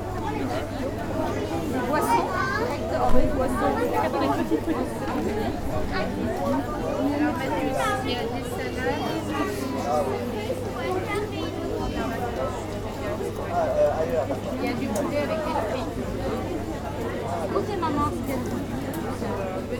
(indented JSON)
{
  "title": "l'isle sur la sorgue, quai rouge de l' isle, market - l'isle sur la sorgue, quai rouge de l'isle, market",
  "date": "2011-08-25 18:28:00",
  "description": "On the weekly market at L'Isle Sur la Sorgue nearby one of the Sorgue river channels. Market stands and vistors passing by on the narrow road.\ninternational village scapes - topographic field recordings and social ambiences",
  "latitude": "43.92",
  "longitude": "5.05",
  "altitude": "60",
  "timezone": "Europe/Paris"
}